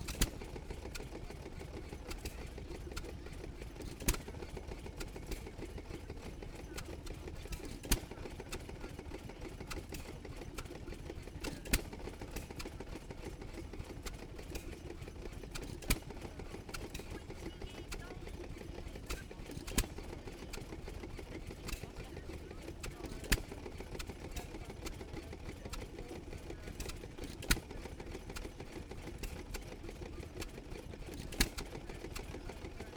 Welburn, York, UK - amanco choreboy 1924 ...
amanco chore boy 1924 stationary engine ... hit and miss open crank engine ... 1 and 3 quarter hp ... used as water pump ... corn sheller ... milking machines ... washing machines ... on display at the helmsley show ...
England, United Kingdom, 26 July 2022, 12:30